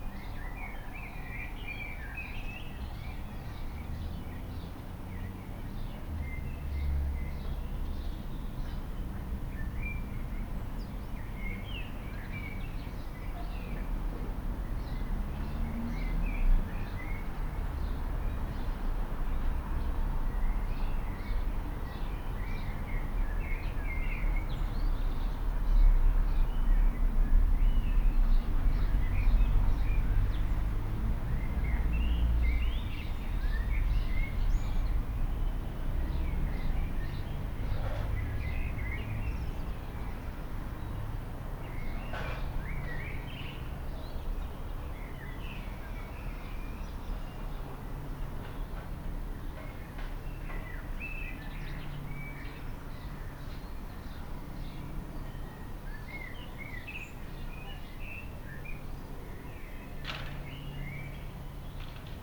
saturday morning, cars, gardening noises, urban suburb, family home